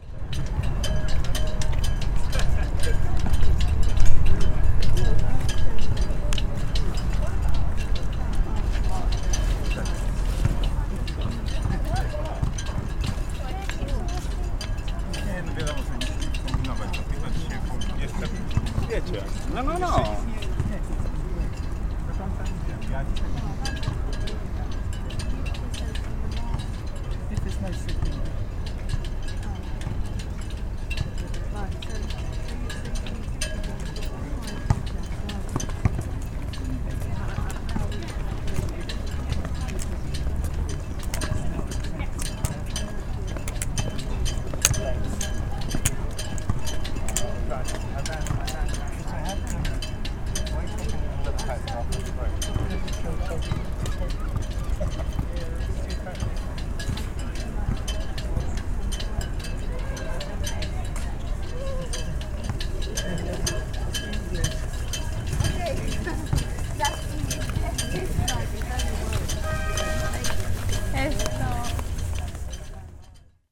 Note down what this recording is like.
Brighton Beach Dinghys, breezy day on Brighton Beach.